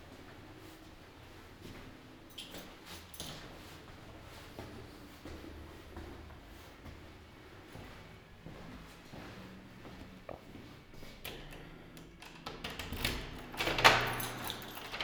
Ascolto il tuo cuore, città. I listen to your heart, city. Several Chapters **SCROLL DOWN FOR ALL RECORDINGS - “La flanerie aux temps du COVID19, un an après”: Soundwalk
“La flanerie aux temps du COVID19, un an après”: Soundwalk
Chapter CLXI of Ascolto il tuo cuore, città. I listen to your heart, city
Wednesday, March 10th, 2021. Same path as 10 March 2020, first recording for Chapter I: “walking in the movida district of San Salvario, Turin the first night of closure by law at 6 p.m.of all the public places due to the epidemic of COVID19.”
Start at 8:58 p.m., end at h. 9:29 p.m. duration of recording 31'31''
The entire path is associated with a synchronized GPS track recorded in the (kml, gpx, kmz) files downloadable here: